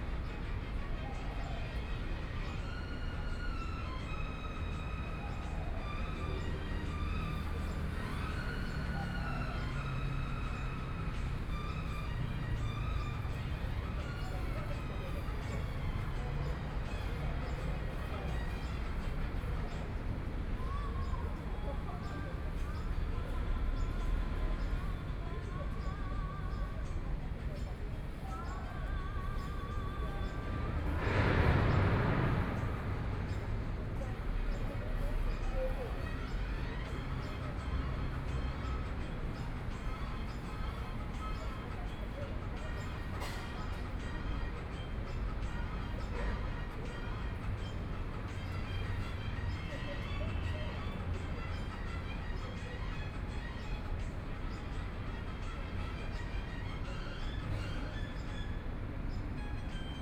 YongJing Park, Taipei City - Sitting in the park
Sitting in the park, Far from the construction site noise, Birds singing
Sony PCM D50+ Soundman OKM II
Taipei City, Taiwan, 27 April 2014